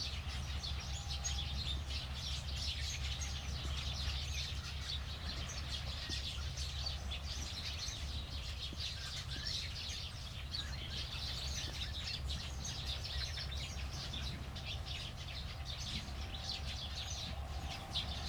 Autumn sparrows chatter in bushes near the fjord, Nørgårdvej, Struer, Denmark - Autumn sparrows chatter in bushes near the fjord
A grey late September day, with distant waves and digging machine.